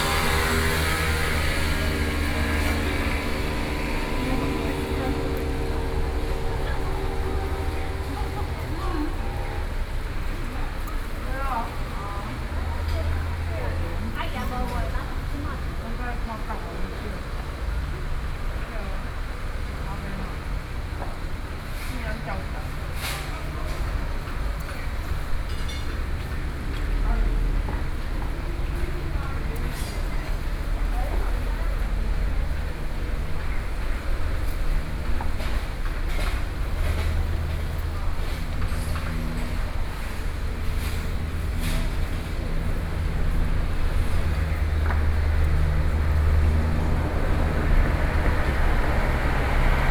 Ruìpíng Rd, Pingxi District, New Taipei City - Through the town's streets